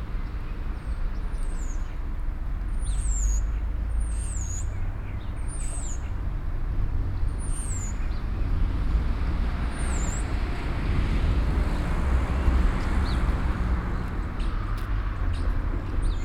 Beynost, Place de la Gare, an old man walking slowly to his car
An old man walking slowly, then going away with his car.
PCM-D50, SP-TFB-2, binaural.
Beynost, France